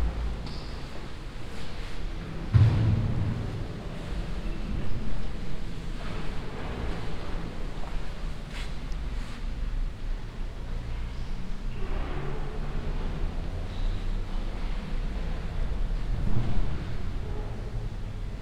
Vilniaus šv. Pranciškaus Asyžiečio parapija, Maironio gatvė, Vilnius, Litauen - Vilnius, church ambience
Inside a small historical church at Vilnius city. The sounds of whispering visitors entering the space through the wooden door and the sounds of cameras taking pictures of the religious objects and paintings.
international city sounds - topographic field recordings and social ambiences
Vilnius, Lithuania